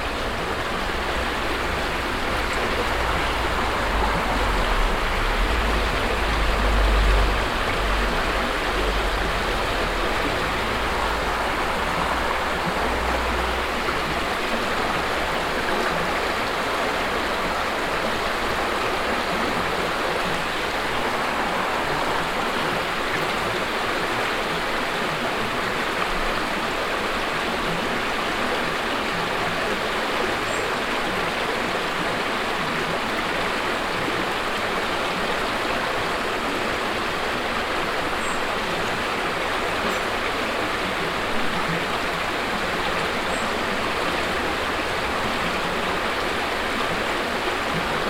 goebelsmühle, bridge, schlinder
Under a train bridge at the river Schlinder. The sound of the peaceful floating water. In the distance the sound of a lorry passing by.
Goebelsmühle, Brücke, Schlinder
Unter einer Zugbrücke am Fluss Schlinder. Das Geräusch des ruhig fließenden Wassers. In der Ferne fährt ein Lastwagen vorbei.
Goebelsmühle, pont, Schlinder
Sous un pont ferroviaire aux bords du fleuve Schlinder. Le bruit de l’eau qui s’écoule paisiblement. Dans le lointain, on entend un camion pass
Project - Klangraum Our - topographic field recordings, sound objects and social ambiences
8 August 2011, 19:24